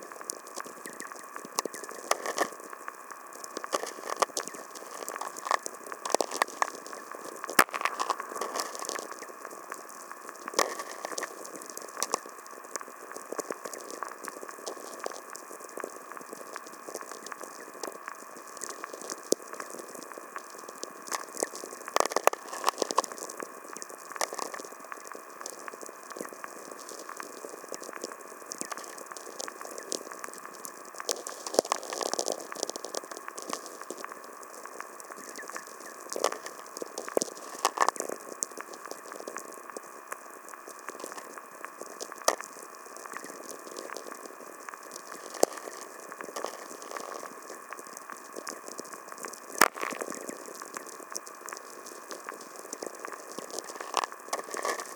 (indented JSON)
{
  "title": "Senheida, Latvia, strong VLF atmospheric electricity",
  "date": "2020-07-30 22:00:00",
  "description": "recorded with VLF receiver. some tweakers are heard",
  "latitude": "55.77",
  "longitude": "26.74",
  "altitude": "156",
  "timezone": "Europe/Riga"
}